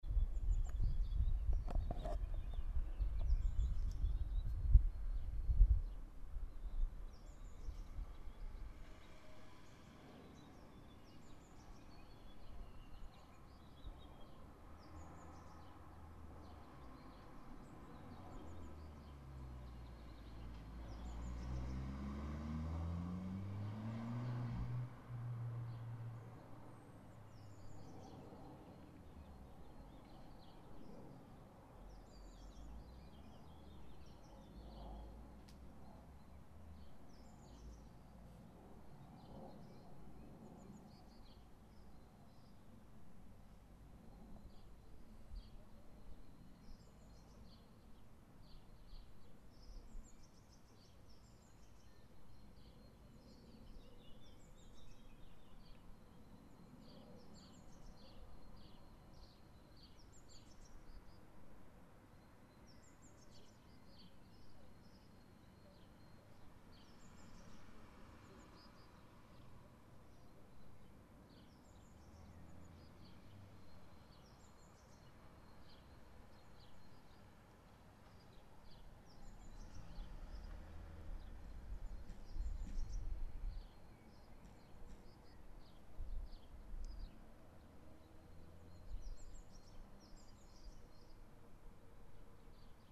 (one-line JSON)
{"title": "Bergedorf, Hamburg, Deutschland - Afternoon Window", "date": "2013-04-24 18:00:00", "description": "shot from the window of my stay at a barren hostel, interpolating machine sounds & birds calls going wild in the afternoon.", "latitude": "53.48", "longitude": "10.22", "altitude": "3", "timezone": "Europe/Berlin"}